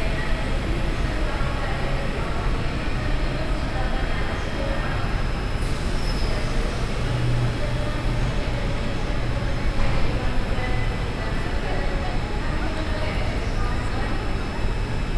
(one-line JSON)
{"date": "2009-08-13 09:15:00", "description": "Brussels, Gare du Nord / Noordstation.", "latitude": "50.86", "longitude": "4.36", "altitude": "30", "timezone": "Europe/Brussels"}